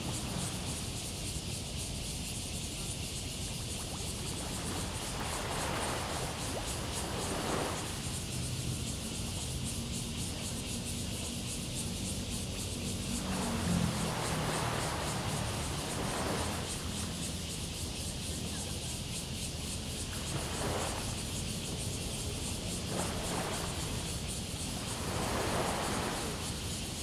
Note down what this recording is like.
On the river bank, Upcoming typhoon, Cicadas cry, Sound tide, Aircraft flying through, Zoom H2n MS+XY